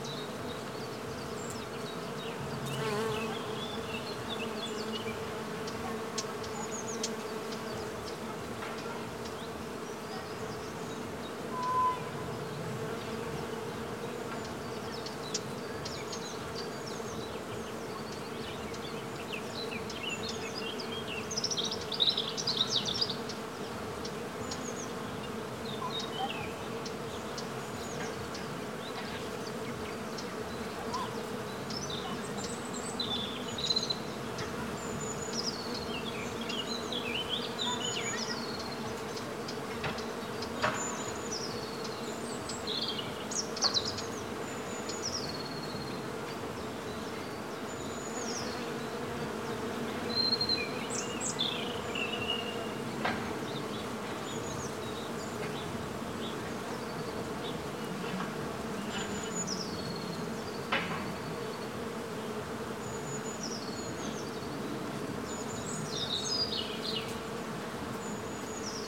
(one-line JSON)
{"title": "Gut Adolfshof, Hämelerwald - Bienen auf der Streuobstwiese", "date": "2022-04-17 15:41:00", "description": "Viele Bäume der Streuobstwiese stehen in Blüte und empfangen Bienen in Scharen.\nSony-D100, UsiPro in den Ästen", "latitude": "52.33", "longitude": "10.12", "altitude": "75", "timezone": "Europe/Berlin"}